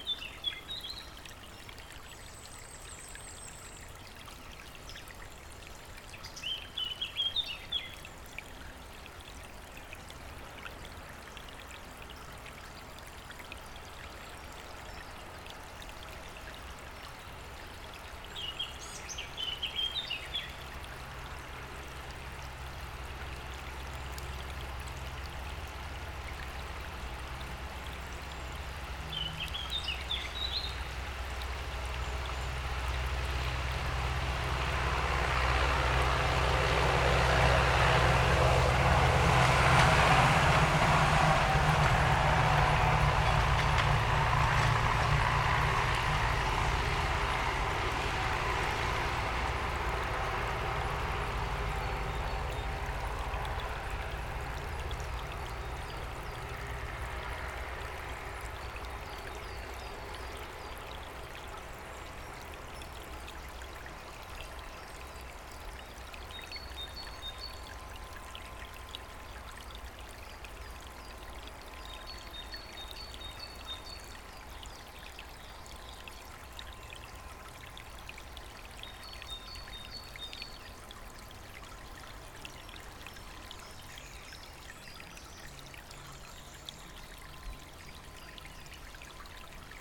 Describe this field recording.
Rivulet in the forest of Baden-Baden, tractor passing by